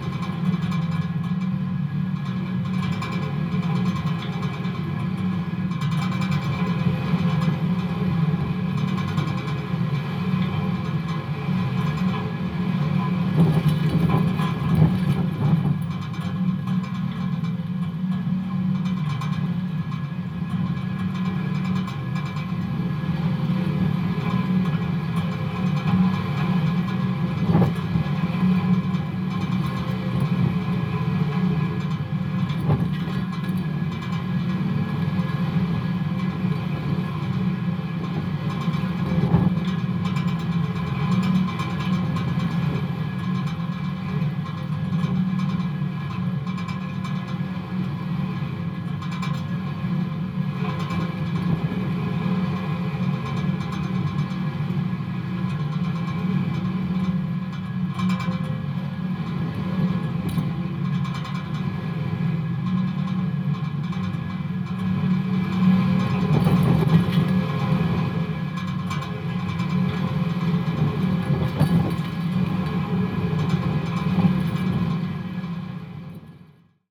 wind on a metal telephone pole, Istanbul
heavy wind blowing across a metal telephone pole on Burgazada
Kalpazankaya Sk, Burgazada, Turkey, 22 February 2010, 15:00